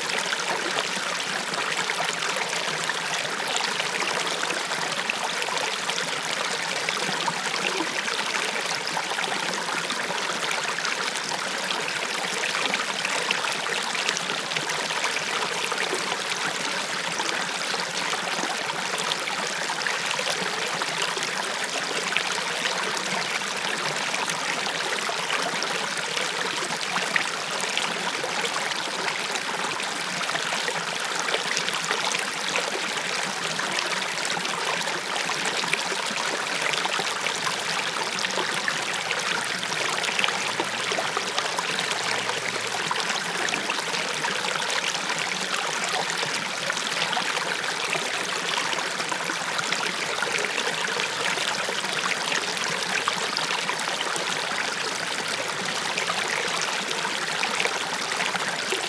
hoscheid, small stream schlänner
The sound of the small stream Schlänner, recorded in early spring while walking the Hoscheid Klangwanderweg - sentier sonore. A sign on the way asks you here to listen to the sound of the water.
Hoscheid, Kleiner Fluss Schlänner
Das Geräusch von dem kleinen Fluss Schlänner, aufgenommen im frühen Frühjahr beim Ablaufen des Klangwanderwegs von Hoscheid. Ein Zeichen auf dem Weg fordert dich hier auf, dem Geräusch des Wassers zu lauschen.
Hoscheid, petit ruisseau Schlänner
Le son du petit ruisseau nommé Schlänner, enregistré au début du printemps en promenade sur le Sentier Sonore de Hoscheid. Un panneau sur le bord du chemin vous appelle à écouter le son de l’eau.
Projekt - Klangraum Our - topographic field recordings, sound art objects and social ambiences
Hoscheid, Luxembourg